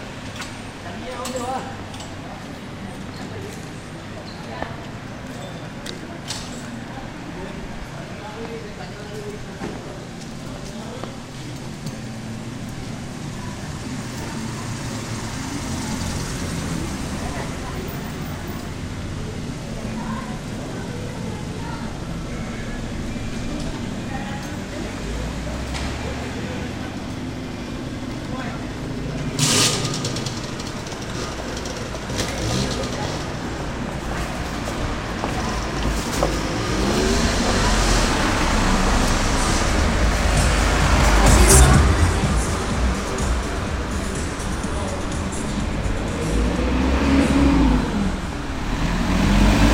Walking through a flock of pigeons and around of the town square.
Nerja, Málaga, Spain, 4 December 2007, 17:01